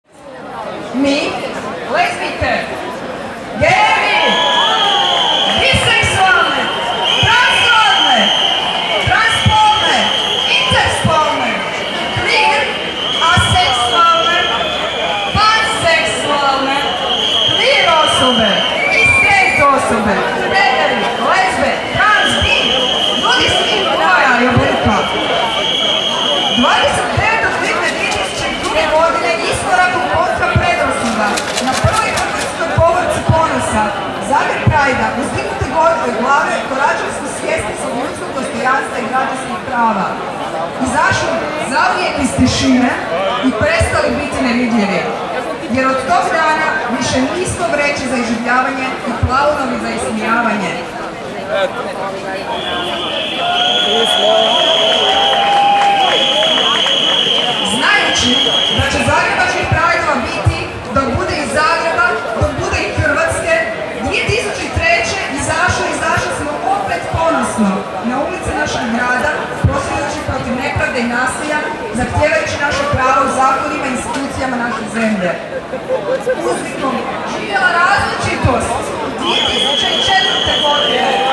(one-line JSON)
{"title": "Zagreb Pride 2011. 4 - Recapitulation of the first 10 years", "date": "2011-06-18 16:15:00", "description": "recapitulation of the first 10 years", "latitude": "45.81", "longitude": "15.98", "timezone": "Europe/Zagreb"}